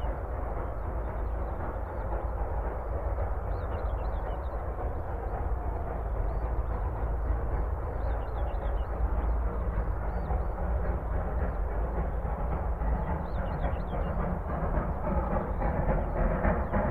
Steinbach-Grosspertholz Bruderndorf - freight train with OEBB 399 steam locomotive (Uher Report, 1981)